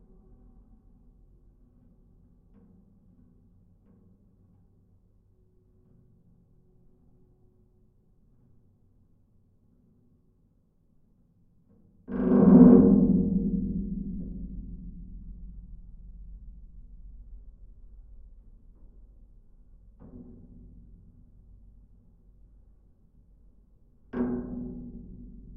Utena, Lithuania, metallic doors
abandoned factory building (remnant of soviet era) in industrial part of my town. big, half open metallic doors swaying in a wind. contact recording
Utenos apskritis, Lietuva, 10 September 2022, 17:30